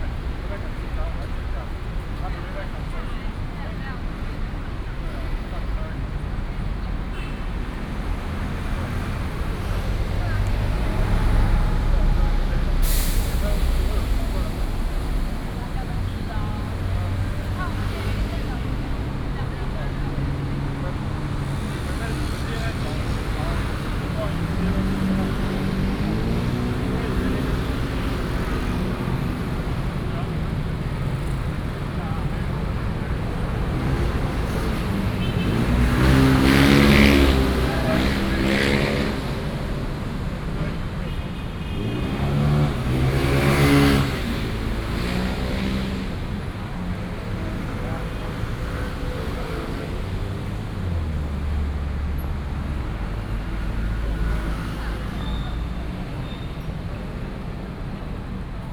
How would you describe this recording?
Walking on the road, End of working hours, Footsteps and Traffic Sound